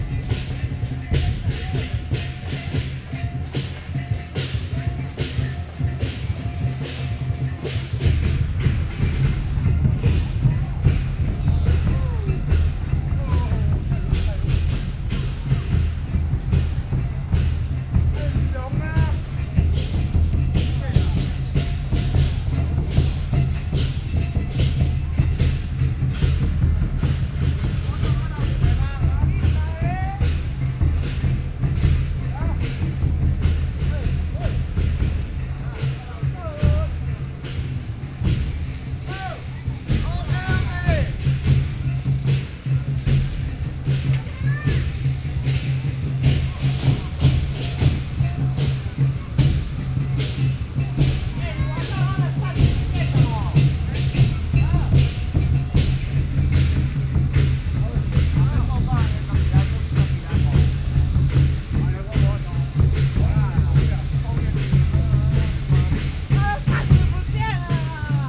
Beitou District, Taipei - Traditional temple festivals
Traditional temple festivals, Firework, Binaural recordings, Sony PCM D50 + Soundman OKM II
Beitou District, Taipei City, Taiwan, 20 October 2013